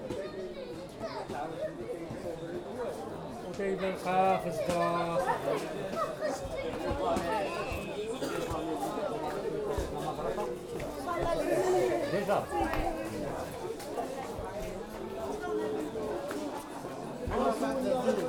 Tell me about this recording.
seems I had to make the quite common experience of getting lost in the Medina of Marrakech. then the sun went down and the battery of my phone too, no gps and maps to navigate. it took a while, with mixed feelings.